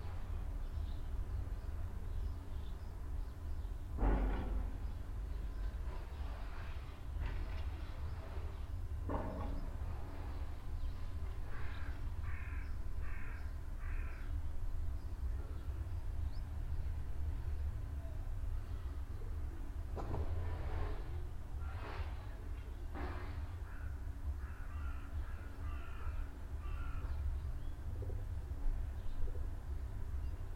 wilwerwiltz, church, bells
In the evening at the Saint-Willibrord church of Wilwerwiltz. In the distance the salarm signal of the closing train way gate, then an approaching train. Then the 19.00 church bells finished by the distant signal horn of the train, a car passing by another train arriving and a boy walking along whistling.
Wilwerwiltz, Kirche, Glocken
Abends bei der Sankt-Willibrord-Kirche in Wilwerwiltz. In der Ferne das Warnsignal der sich schließenden Bahnschranke, dann ein sich nähernder Zug. Dann um 19 Uhr die Kirchenglocken, schließlich das ferne Signal des Zuges. Ein Auto fährt vorbei, ein weiterer Zug kommt an und ein Junge spaziert pfeifend vorbei.
Die Kirche ist Teil des regionalen Kiischpelter Pfarrverbands.
Wilwerwiltz, église, cloches
Le soir à l’église Saint-Willibrord de Wilwerwiltz. On entend dans le lointain le signal d’alerte d’un passage à niveau qui se ferme puis le train qui approche.